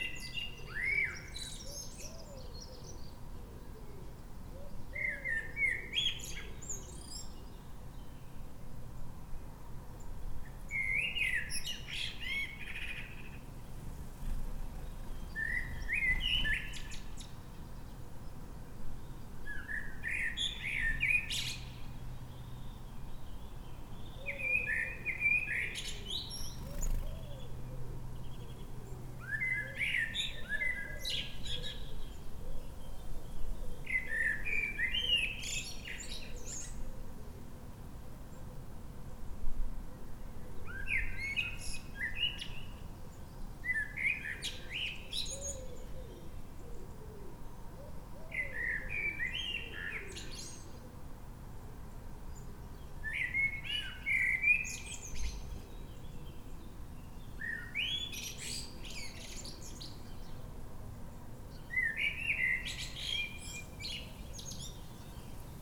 Katesgrove, Reading, UK - Blackbird singing first thing
A blackbird that has woken us up most mornings this spring with his beautiful early-morning song.
14 May 2016